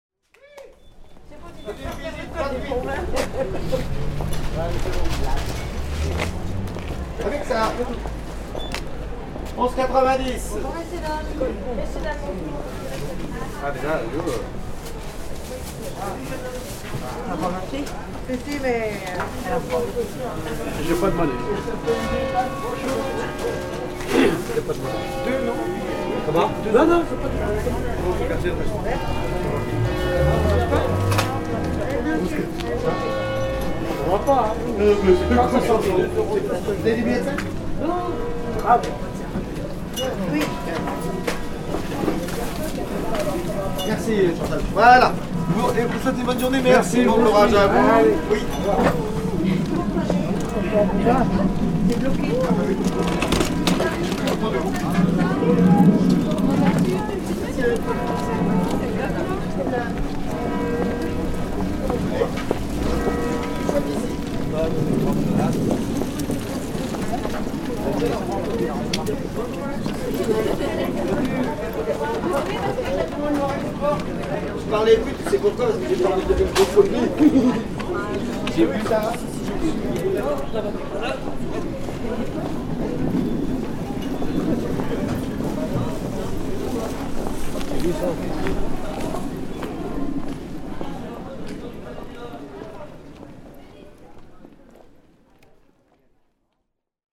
L'Aigle, France - Marché de l'Aigle
Ambiance du marché de l'Aigle avec cloches de l'église. Enregistré avec un Zoom H6 et une paire de Neumann KM140
11 February 2014, 10:59